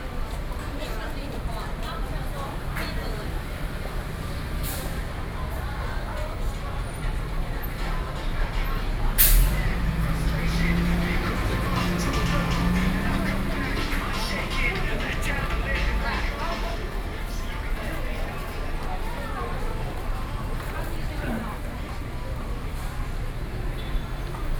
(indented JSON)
{
  "title": "Sec., Zhongxiao E. Rd., Da’an Dist., Taipei City - SoundWalk",
  "date": "2012-11-02 20:38:00",
  "latitude": "25.04",
  "longitude": "121.55",
  "altitude": "16",
  "timezone": "Asia/Taipei"
}